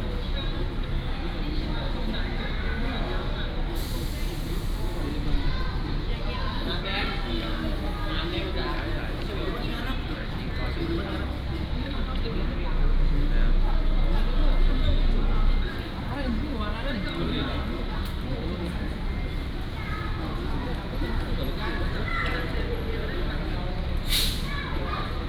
彰化客運彰化站, Changhua City - At the passenger terminal
At the passenger terminal, Traffic sound, Station broadcasting